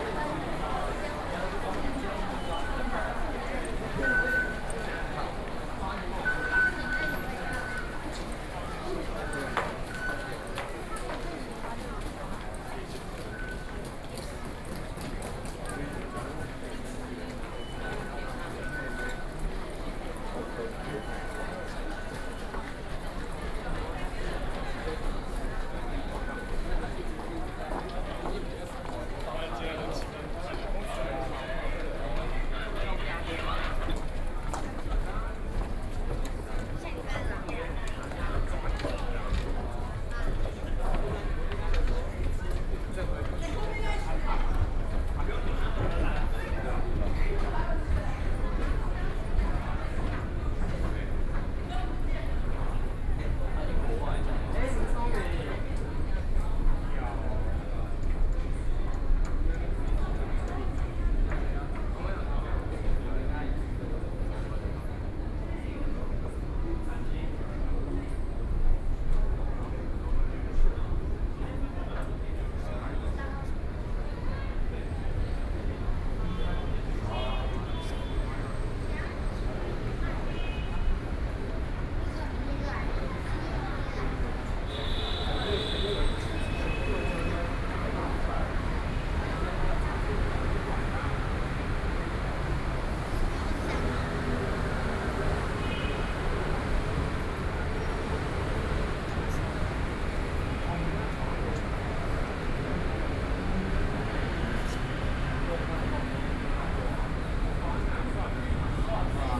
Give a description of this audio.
soundwalk in the Zhongxiao Fuxing Station, Sony PCM D50 + Soundman OKM II